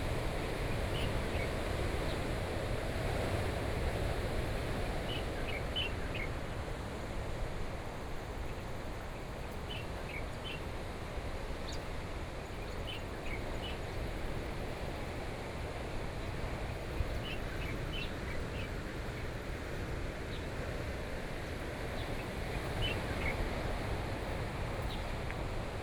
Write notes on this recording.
On the coast, Sound of the waves, Birdsong, Traffic Sound, Very hot weather, Sony PCM D50+ Soundman OKM II